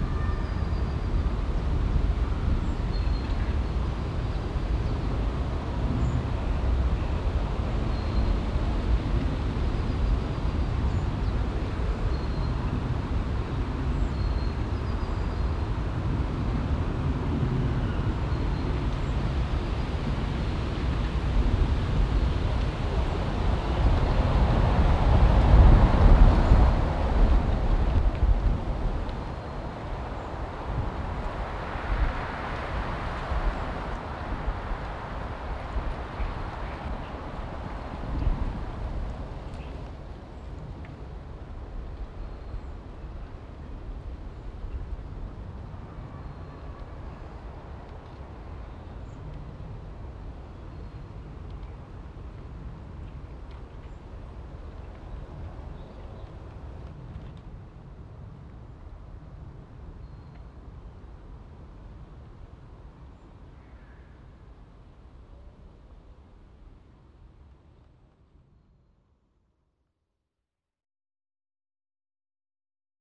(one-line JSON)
{"title": "heiligenhaus, am steinbruch, tannenwind", "date": "2008-07-06 12:50:00", "description": "wind in grosser tanne, im hintergrund vorbeifahrt der kalkbahn, abends\nproject: :resonanzen - neanderland - soundmap nrw: social ambiences/ listen to the people - in & outdoor nearfield recordings, listen to the people", "latitude": "51.31", "longitude": "6.95", "altitude": "116", "timezone": "Europe/Berlin"}